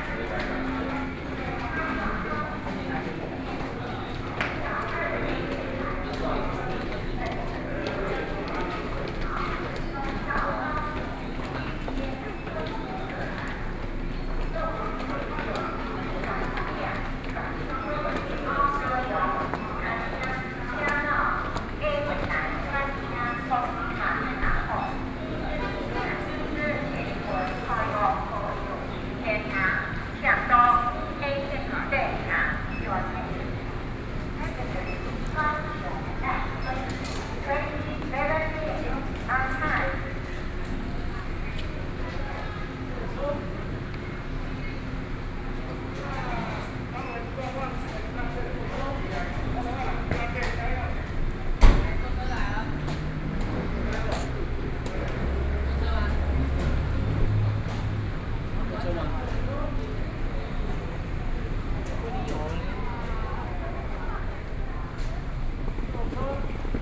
Pingtung Station, Taiwan - Walking in the station
From the station platform, Through the underground passage, Went outside the station